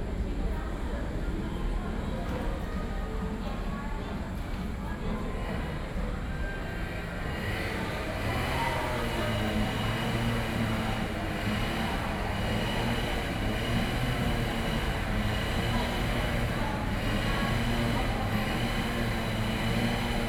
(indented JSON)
{
  "title": "Beitou - In the bottom of the track",
  "date": "2013-08-06 21:28:00",
  "description": "In the bottom of the track, Environmental Noise, Sony PCM D50 + Soundman OKM II",
  "latitude": "25.13",
  "longitude": "121.50",
  "altitude": "10",
  "timezone": "Asia/Taipei"
}